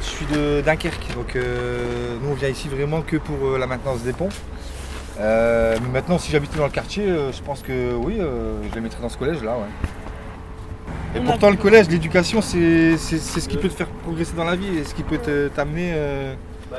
Quai de Rouen, Roubaix, France - La maintenance du pont
Interview d'Emmanuel qui travaille à la maintenance du pont
7 May, 11:25